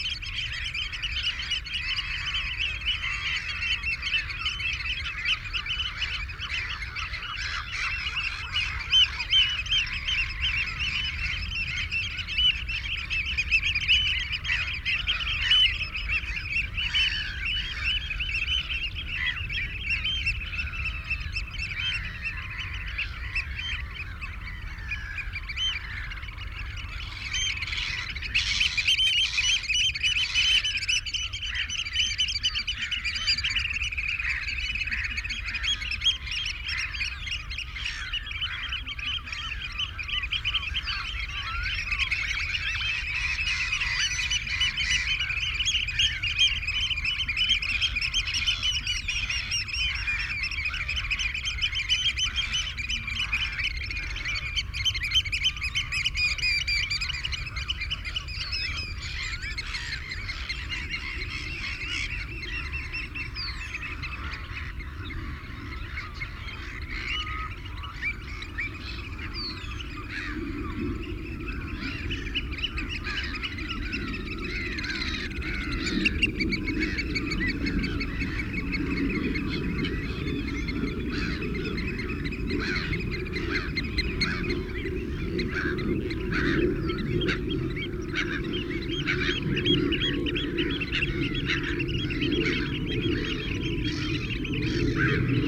Belper's Lagoon soundscape ... RSPB Havergate Island ... fixed parabolic to cassette recorder ... birds calls ... song ... black-headed gull ... herring gull ... canada goose ... shelduck ... avocet ... redshank ... oystercatcher ... ringed plover ... lapwing ... linnet ... meadow pipit ... much background noise ... from planes and boats ...